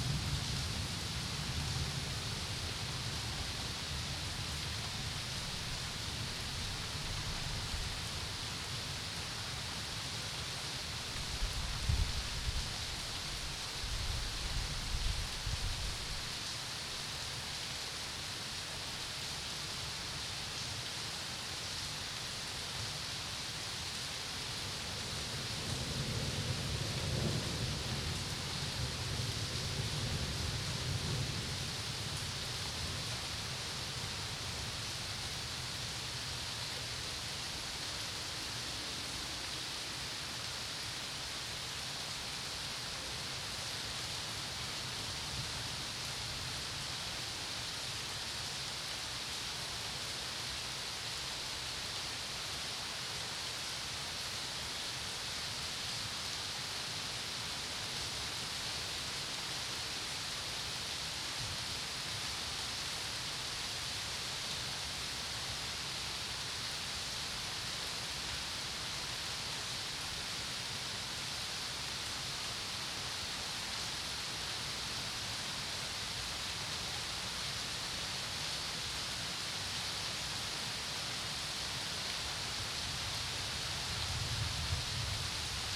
Gerard Nerval, Chimery
sonet ostatni : Złote wersy (Vers dorés)
przekład Anka Krzemińska [Anna Sileks]
Ach cóż! Wszystko jest wrażliwe!
Pitagoras
Człowieku ! Wolnomyślicielu ! - sądzisz, żeś jeden myślący
W świecie tym, gdzie życie w każdej rzeczy lśniące :
Od sił coś opanował twa wolność zależny
Lecz Wszechświat twoje znawstwa głucho sponiewierzy.
Szanuj w bestii jej siłę działająca :
W każdym kwiecie jest dusza w Naturze wschodząca ;
W metalu jest ukryta miłości misteria :
Wszystko jest wrażliwe ! I mocy z bytu twego pełne !
Strzeż się w ślepym murze szpiegującego wzroku :
Przy każdej materii słowo krąży boku ...
Nie wymuszaj jej służby obojętnym celom !
Często w mrocznym bycie Bóg ukryty mieszka ;
I jak narodzone oko pokryte jest rzęsami
W łupinie czysty duch wzrasta wzmocnionej kamieniami !
Vers dorés
Homme ! libre penseur - te crois-tu seul pensant
Dans ce monde où la vie éclate en toute chose :
Des forces que tu tiens ta liberté dispose